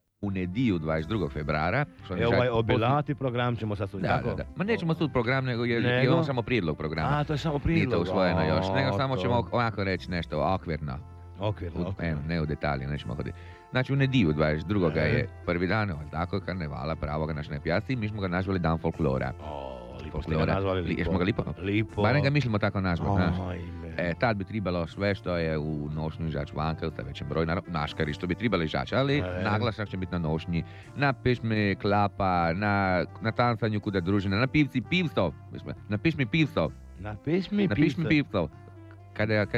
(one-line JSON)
{"title": "Pag, Croatia, Karneval Announcing - Radio Pag Archive", "date": "1998-02-21 10:30:00", "latitude": "44.45", "longitude": "15.05", "altitude": "3", "timezone": "Europe/Zagreb"}